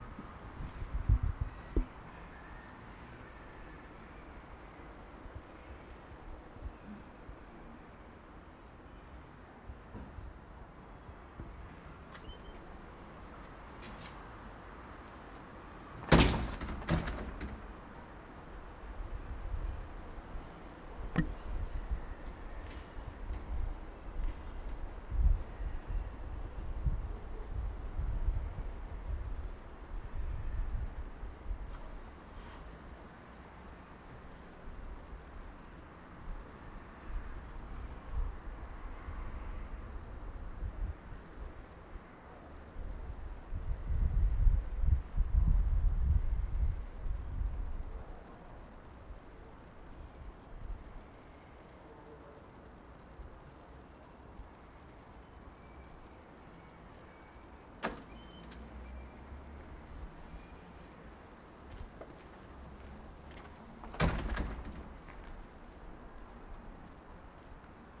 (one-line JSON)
{"title": "Nossa Senhora do Pópulo, Portugal - Átrio EP2, ESAD.CR", "date": "2014-03-03 18:40:00", "description": "An out door place form ESAD.CR, neer of forest. Mostly used by students and teachers", "latitude": "39.39", "longitude": "-9.14", "timezone": "Europe/Lisbon"}